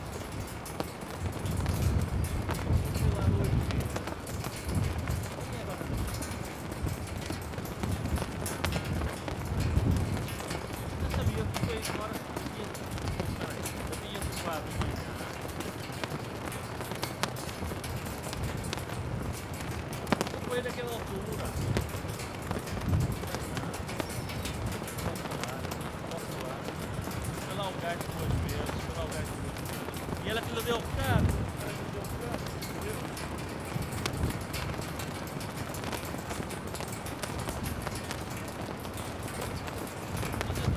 Porto, west corner of the city, at the pier - flag pole drummers
a row of flag poles trembling in the wind. steel cables drum on the poles. flags flap fiercely. person talking on the phone.